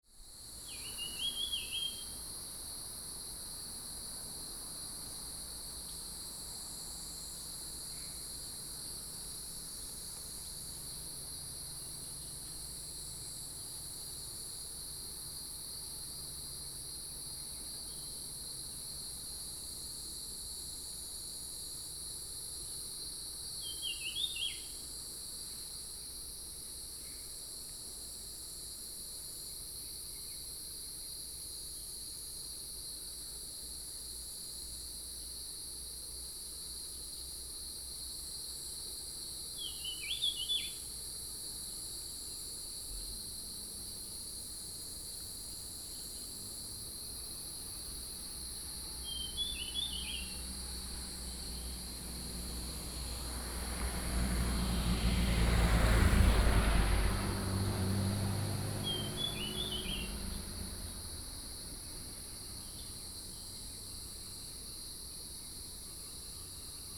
Mountain trail, Cicadas cry, Bird calls, Traffic Sound
Nantou County, Puli Township, 桃米巷11號, 11 August 2015